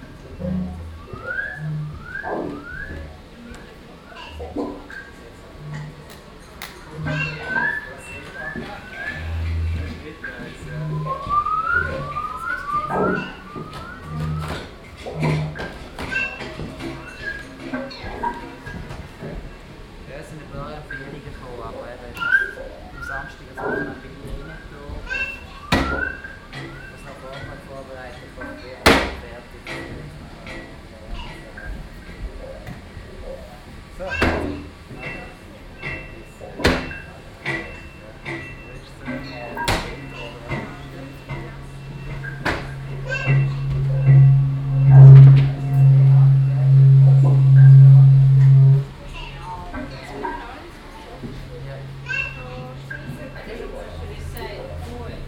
basel, dreispitz, shift festival, zelt, shift 4 kids
soundmap international
social ambiences/ listen to the people - in & outdoor nearfield recordings